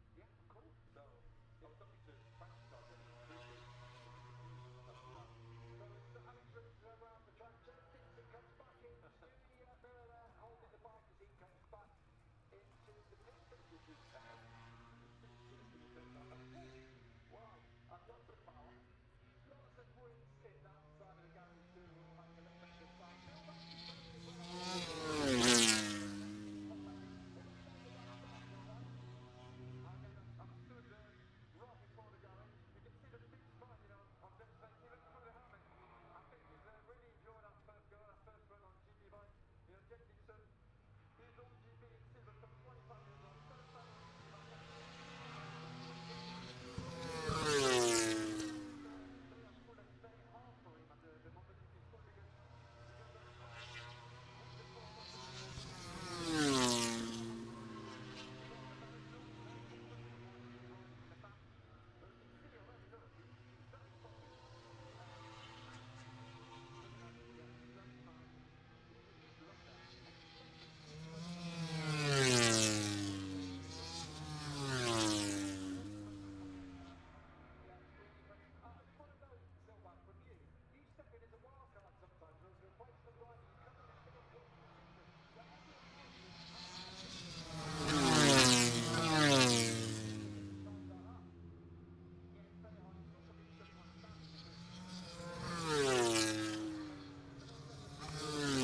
Silverstone Circuit, Towcester, UK - british motorcycle grand prix 2021 ... moto grand prix ...
moto grand prix free practice one ... maggotts ... dpa 4060s to Zoom H5 ...